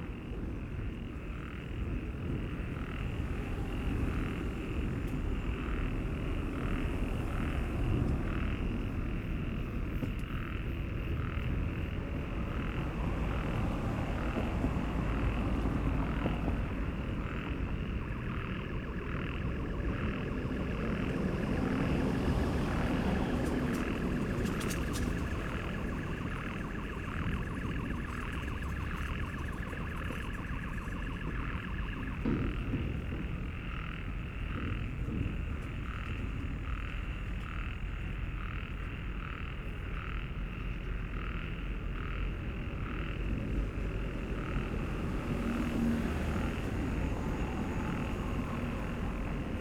Shenglian Rd, Baoshan Qu, Shanghai Shi, China - Frogs in industrial area
Frogs in a small stream are singing, discontinuously. Busy traffic in the back. Distant heavy construction work with alarm sounds
Des grenouilles dans un ruisseau chantent, sans interruption. Bruit de trafic derrirère l’enregistreur. Bruit de chantier lointain, et son d’alarme